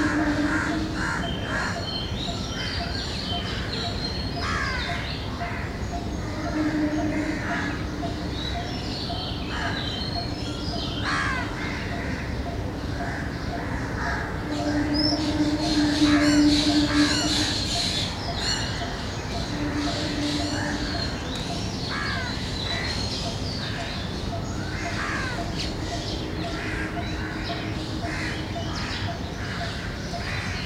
Chittaranjan Colony, Kolkata, West Bengal, India - Early morning sounds from the roof of my flat, Kolkata
The mic is placed on the roof of my flat. You hear lots of birds, mostly, crows, cuckoos, doves, sparrows etc., distant train horns, ac hum, and occasional traffic. Summers are normally busy from early mornings.